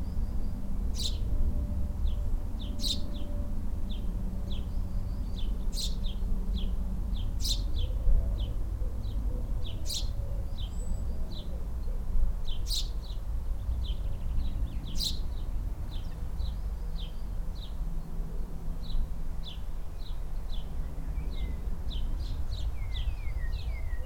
The birdtable, Katesgrove, Reading, UK - Planes, birds, traffic and wind chimes
This recording was made by strapping my EDIROL R-09 onto the underside of a bird table with a cable tie. From there is picks up on the ceramic wind chimes that hang near the back door, the birdsong of the birds that hang out in a nearby Walnut tree and the surrounding hedges, the huge noise of planes passing on the flight path to Heathrow, some noises from vehicles on the nearby roads, a blackbird, and a general rumble of traffic. A couple of wood pigeons also sound in the recording, and there is a tapping sound, produced by the birds fetching seed off the table with their beaks.
18 April 2014, ~17:00